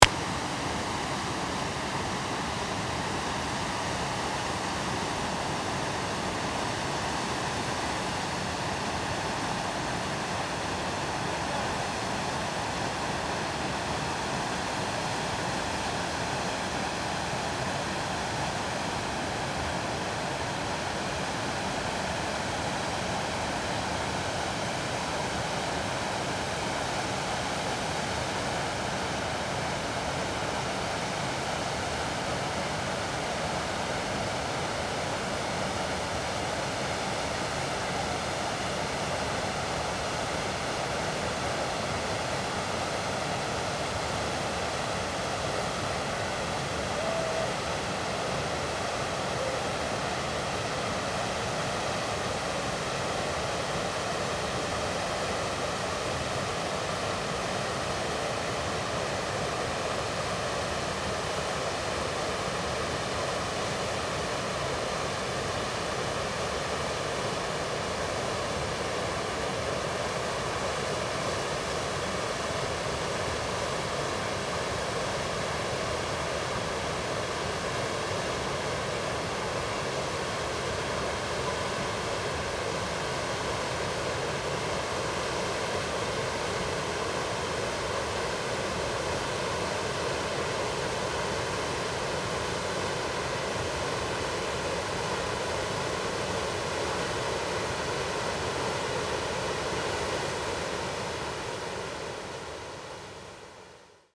仁愛鄉南豐村, Nantou County - Waterfalls facing far away
Waterfalls facing far away
Zoom H2n MS+XY +Sptial Audio